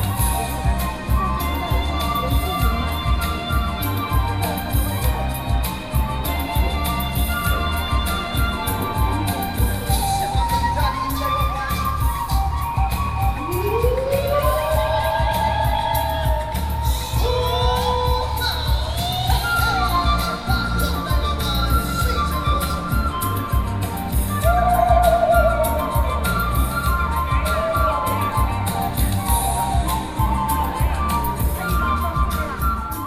Xinyi District, Taipei City - Street performances
4 November, ~14:00